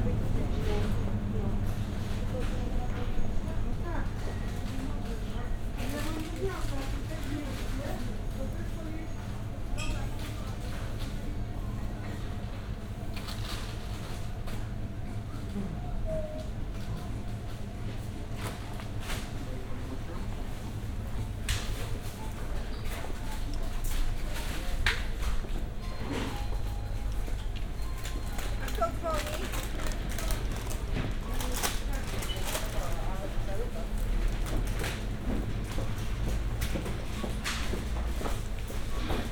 (binaural recording) walking around grocery store and the shopping mall. passing by refrigerator, escalators, hairdressers, restaurants, laundry. roland r-07 + luhd PM-01 bins)